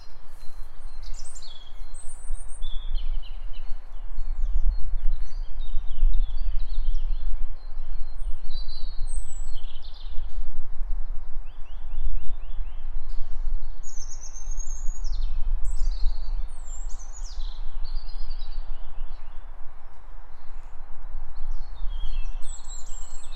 Marlotpad, Den Haag, Nederland - Bi9rd at the Marlot Park
Birds at the Marlot PArk. Recorded with a Tascam DR100-MK3
23 April, 13:31